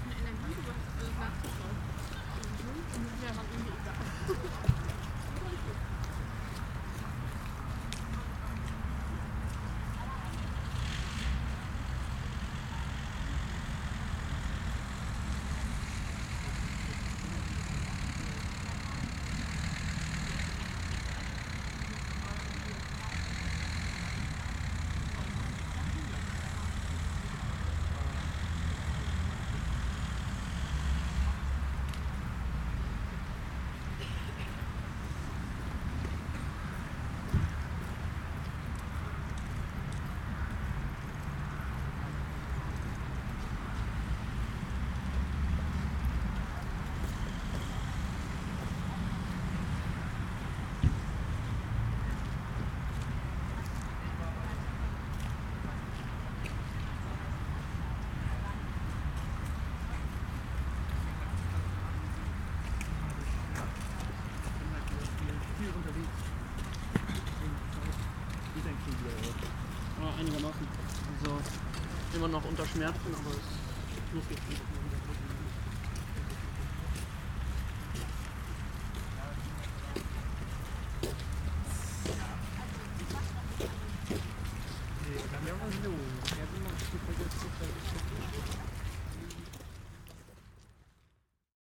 winter day ambience on CAU campus
students walking by leaving the mensa on a winter day in 2010.